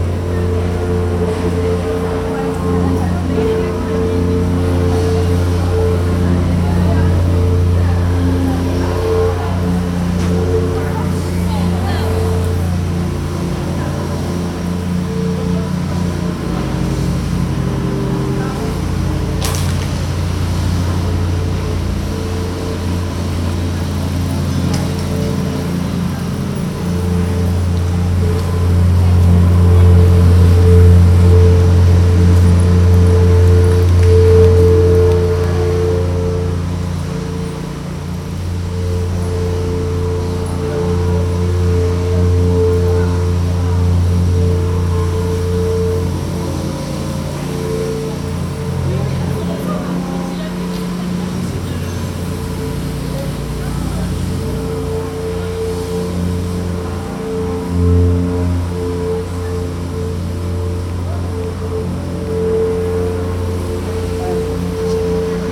a worker using a machine during a street renovation, the sound work in resonnance with this wide street.